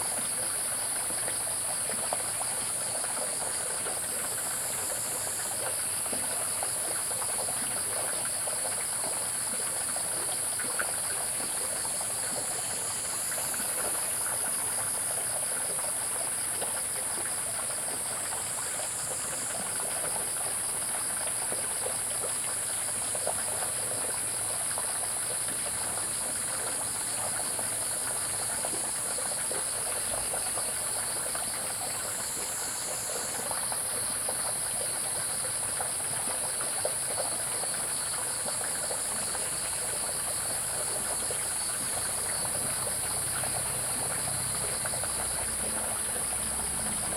TaoMi Li., 青蛙阿婆的家 Puli Township - Sound of insects
Bird calls, Crowing sounds, The sound of water streams, Sound of insects
Zoom H2n MS+XY
Puli Township, 桃米巷11-3號